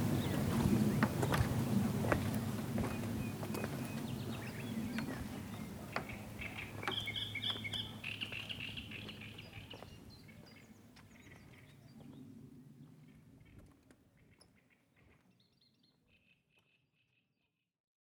Kirchstraße, Teupitz, Deutschland - Seebrücke Teupitz
Seebrücke Teupitz Zoom H4n / ProTools